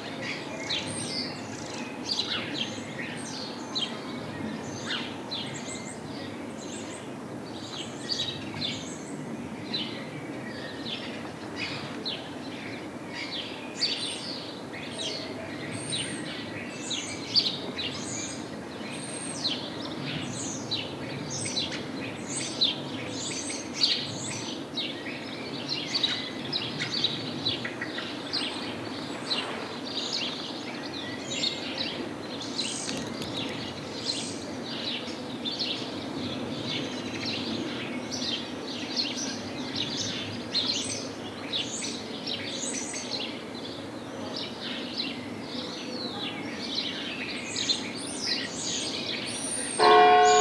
Sainte-Marie-de-Ré, France - Sparrows
In the small center of Sainte-Marie-de-Ré, sparrows are singing and trying to seduce. The street is completely overwhelmed by their presence. During the recording, the bell, ringing 8:30 pm.
May 2018